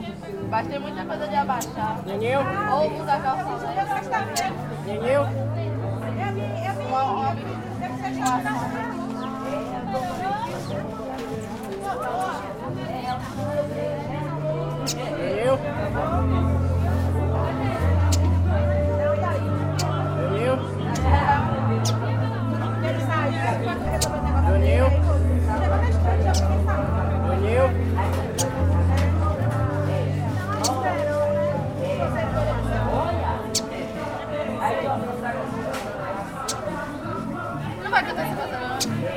Feira, Cachoeira - BA, Brasil - Pássaro cantando na feira
Na feira, um homem pede para seu pássaro cantar.
In the free market, a man asks for your bird to sing.